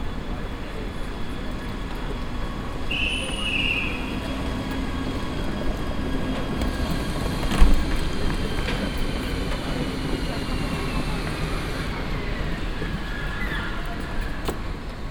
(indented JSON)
{
  "title": "Düsseldorf, HBF, Gleis - düsseldorf, hbf, gleis 18",
  "date": "2009-01-24 16:02:00",
  "description": "At the main station on track numer 18\nsoundmap nrw: social ambiences/ listen to the people - in & outdoor nearfield recordings",
  "latitude": "51.22",
  "longitude": "6.79",
  "altitude": "48",
  "timezone": "Europe/Berlin"
}